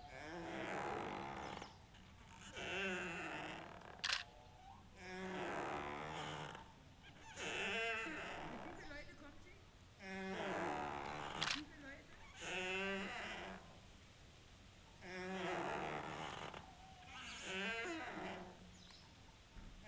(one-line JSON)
{"title": "Groß Neuendorf, Oder", "date": "2011-09-27 13:30:00", "description": "sound of a long swing", "latitude": "52.70", "longitude": "14.41", "altitude": "10", "timezone": "Europe/Berlin"}